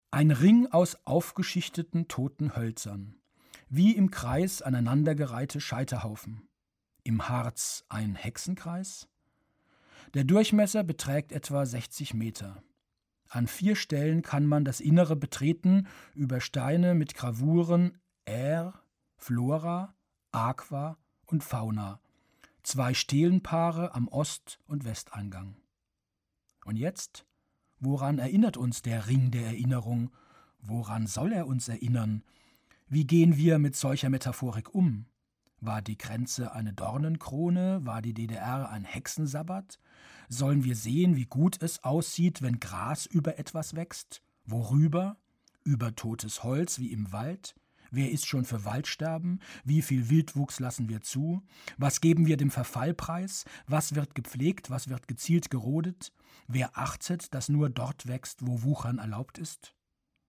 bei sorge - ring der erinnerung
Produktion: Deutschlandradio Kultur/Norddeutscher Rundfunk 2009
8 August 2009